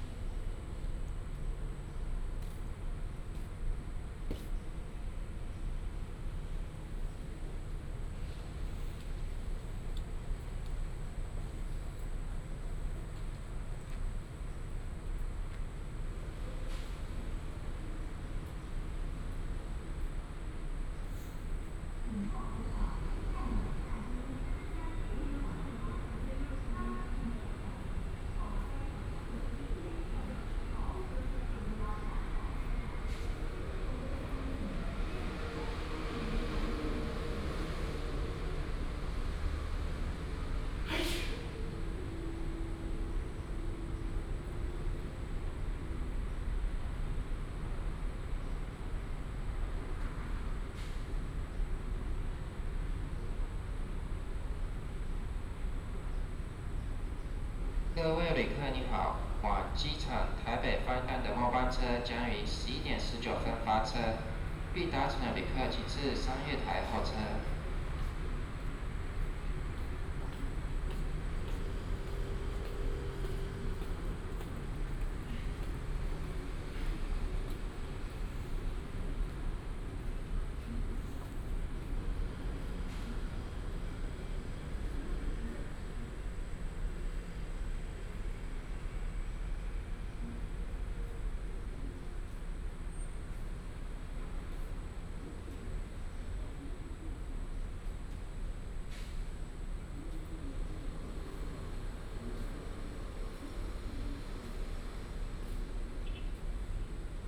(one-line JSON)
{"title": "Taoyuan HSR Station, Zhongli District, Taoyuan City - at the station platform", "date": "2018-02-28 22:56:00", "description": "At the station platform, MRT train passing by\nBinaural recordings, Sony PCM D100+ Soundman OKM II", "latitude": "25.01", "longitude": "121.21", "altitude": "81", "timezone": "Asia/Taipei"}